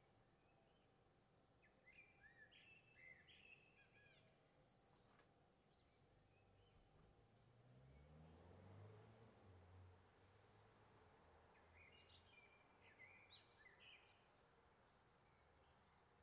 Rue Alphonse Daudet, Villeneuve-sur-Lot, France - Enregistrement sonore extérieur 01
Premier rec effectué en XY à la fenêtre de mon studio micros DM8-C de chez Prodipe (dsl) XLR Didier Borloz convertisseur UAD Apollo 8 Daw Cubase 10 pro . Pas de traitement gain d'entrée +42Db . Eléments sonores entendus essentiellement des oiseaux quelques véhicules et des sons de voisinage.